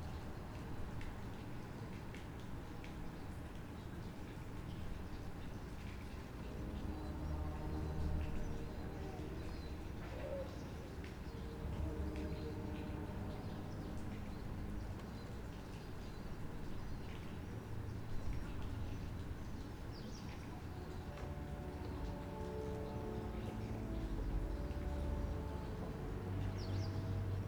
Levoča, Levoča, Slovakia - Morning on Master Paul's Square
Quiet sunny morning on Master Paul's Square in Levoča. A mess in nearby St. Jacob's church is comming to an end - church doors open, people are walking home and chatting.
Prešovský kraj, Východné Slovensko, Slovensko